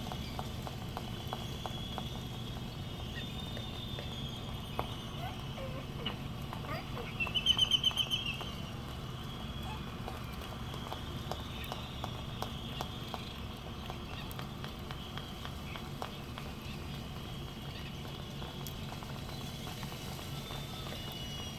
United States Minor Outlying Islands - Laysan albatross soundscape ...
Sand Island ... Midway Atoll ... soundscape with laysan albatross ... canaries ... white terns ... black noddy ... Sony ECM 959 one point stereo mic to Sony minidisk ... background noise ...
December 1997